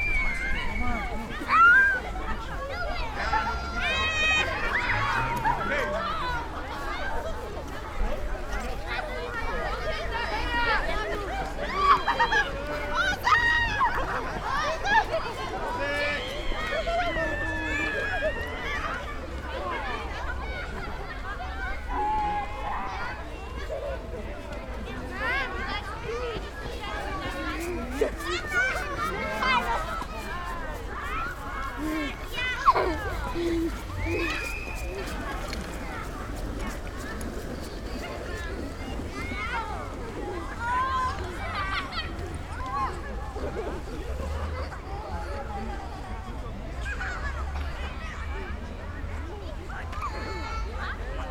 {"title": "volkspark am weinberg - schlittenfahrt / sledging", "date": "2009-02-19 18:30:00", "description": "19.02.2009 18:30 15cm neuschnee, kinder fahren schlitten / 15cm fresh snow, children sledging", "latitude": "52.53", "longitude": "13.40", "altitude": "47", "timezone": "Europe/Berlin"}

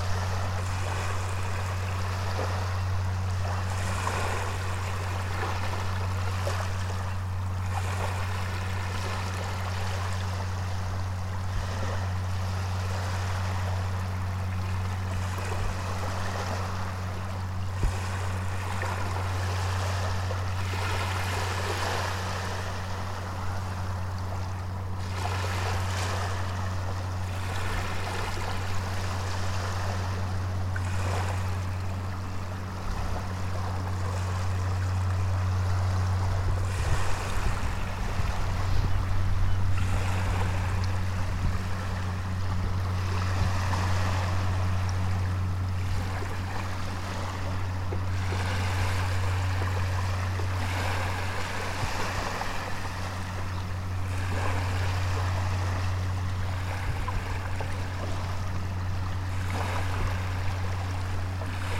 {"title": "Port Hardy, BC, Canada - Lochness Monster", "date": "2013-11-28 14:53:00", "description": "Sound of the Lochness Monster. Recording with Love from the beach", "latitude": "50.72", "longitude": "-127.49", "altitude": "1", "timezone": "America/Vancouver"}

